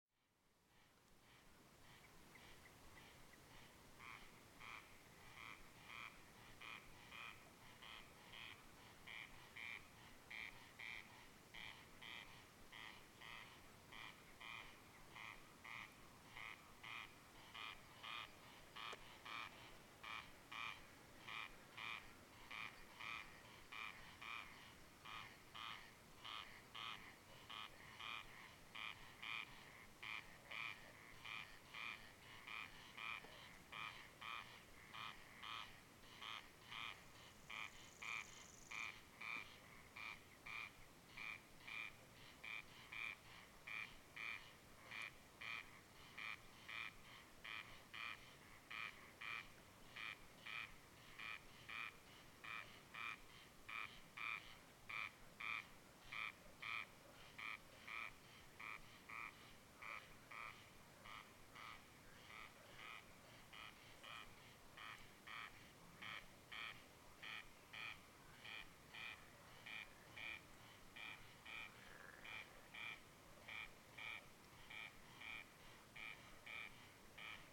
crex crex and evening silence, Rasina
phasing crex crex calls in the field
Põlvamaa, Estonia, 28 June 2010, 00:12